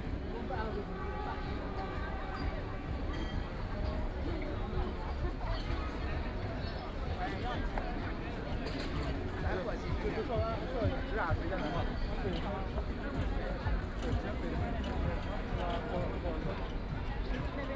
Nanjin Road, Shanghai - soundwalk
walking in the Store shopping district, Walking through the streets of many tourists, Binaural recording, Zoom H6+ Soundman OKM II